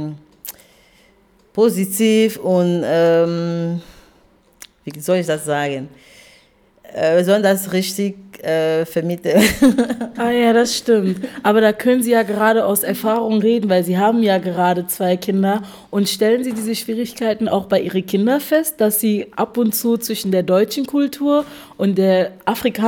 Office of AfricanTide Union, Dortmund - zwischen den Kulturen...
... Raisa interviews Marie… they get talking about the education of children. How to turn the difficulty of life between two cultures in to an advantage…?!
Dortmund, Germany, February 2018